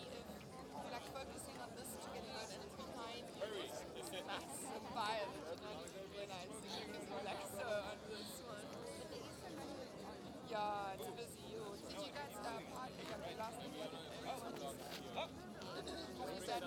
Tankwa Town, Northern Cape, South Africa - The Union Burn
Inner perimeter recording of the art piece Union being burned at Afrikaburn in 2019